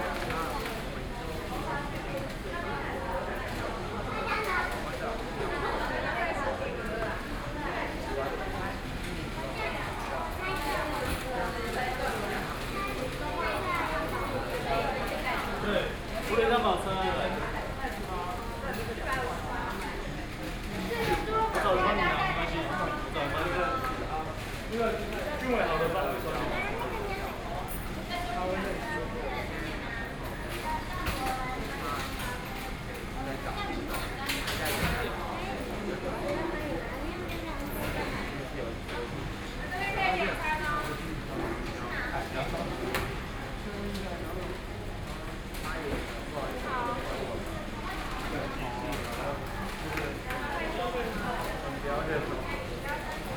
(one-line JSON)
{"title": "宜蘭市南門里, Yilan City - In the fast food restaurant", "date": "2014-07-05 09:21:00", "description": "McDonald's, Ordering counter\nSony PCM D50+ Soundman OKM II", "latitude": "24.75", "longitude": "121.75", "altitude": "11", "timezone": "Asia/Taipei"}